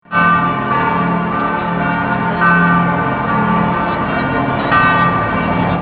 Augustusbruecke - Church bells
Bells of Hofkirche